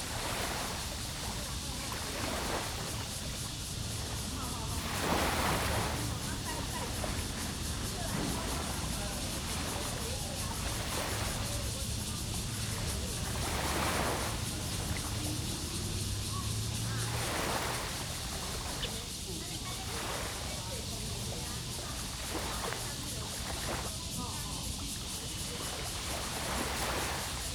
{"title": "榕堤, Tamsui District - Small pier", "date": "2015-07-17 10:48:00", "description": "Cicadas cry, Tide\nZoom H2n MS+XY", "latitude": "25.17", "longitude": "121.44", "altitude": "7", "timezone": "Asia/Taipei"}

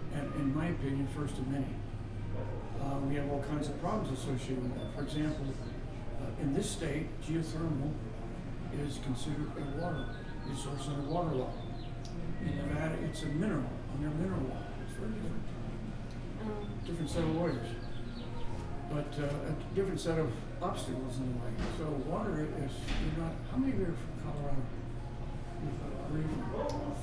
{"title": "neoscenes: Hot Springs Resort lobby", "latitude": "38.73", "longitude": "-106.16", "altitude": "2490", "timezone": "Australia/NSW"}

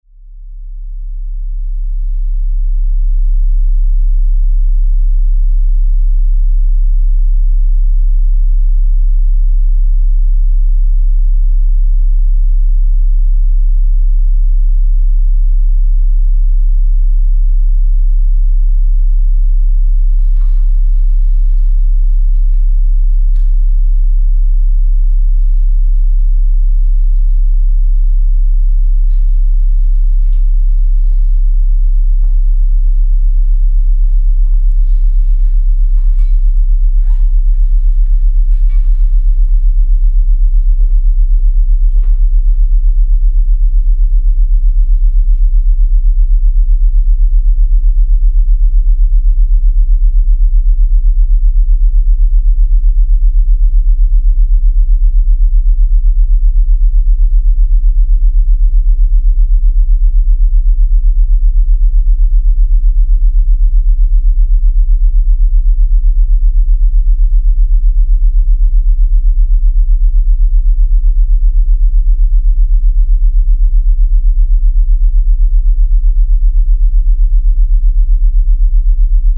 {"title": "fröndenberg, niederheide, garage of family harms - fröndenberg, niederheide, garage of family harms", "description": "second recording of the subsonic sound of the sound and light installation by finnbogi petursson", "latitude": "51.48", "longitude": "7.72", "altitude": "161", "timezone": "Europe/Berlin"}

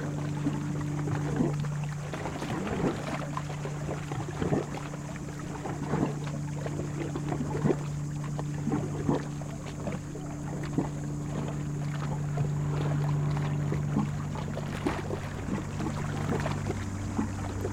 {"title": "Entrelacs, France - côté lac", "date": "2022-07-22 12:35:00", "description": "Glouglous dans des cavités de la berge en rochers et ciment. Véhicules de passage sur la RD991, avion. Bateaux sur le lac.", "latitude": "45.77", "longitude": "5.87", "altitude": "251", "timezone": "Europe/Paris"}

